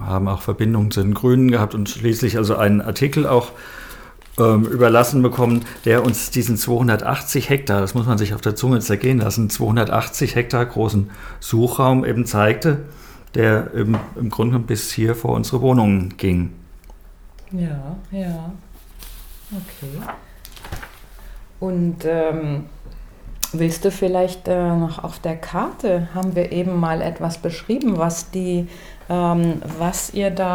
Weetfeld Alte Schule, Hamm, Germany - Conversation in the Old School...
We are gathered around the living room table in the old School of Weetfeld village, a historic building, and home to Rudi Franke-Herold and his family for over 30 years. Together with Stefan Reus, they are founding members of the “Citizen Association Against the Destruction of the Weetfeld Environment”. For almost 15 years, they have been a driving force of local environment activism. Rudi begins by describing the rural landscape around us, an ancient agricultural area. Archeological excavations document settlements from 600 BC. In 1999, Stefan and his wife Petra learnt about local government plans for a 260 ha large Industrial area, the “Inlogparc”, which would cover most of the Weetfeld countryside. With a door-to-door campaign, they informed their neighbours. A living-room neighbourhood meeting was the first step to founding a citizen organization in 2000.
entire conversation archived at: